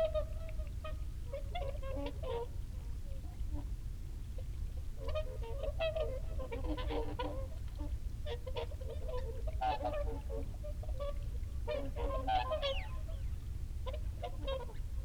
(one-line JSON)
{"title": "Dumfries, UK - whooper swan soundscape ... dummy head ...", "date": "2022-01-31 16:55:00", "description": "whooper swan soundscape ... folly pond ... dummy head with luhd in ear binaural mics to olympus ls 14 ... bird calls from ... mute swan ... canada geese ... mallard ... oystercatcher ... wigeon ... shoveler ... snipe ... teal ... jackdaw ... redshank ... barnacle geese flock fly over at 23 mins ... ish ... compare with sass recording made almost the same time in the scottish water hide some 100m+ away ... time edited extended unattended recording ...", "latitude": "54.98", "longitude": "-3.48", "altitude": "8", "timezone": "Europe/London"}